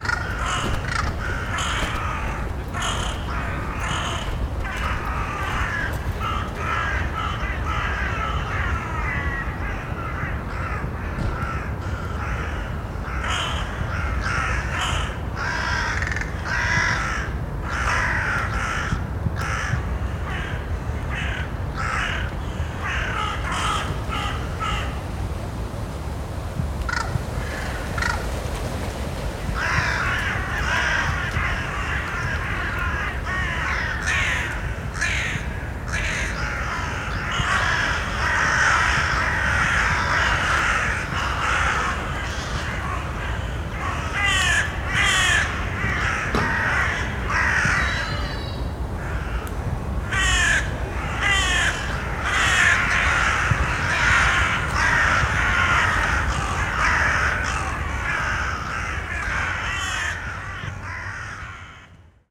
Gaudystraße, Prenzlauer Berg, Berlin, Deutschland - Gaudystraße, Berlin - crows
At 0'23 there's a moment when about 150 crows lift off from the trees simultaneously, unprovoked. You can hear the multitude of flaps, as they are flying over me, almost silently. [I used the Hi-MD-recorder Sony MZ-NH900 with external microphone Beyerdynamic MCE 82]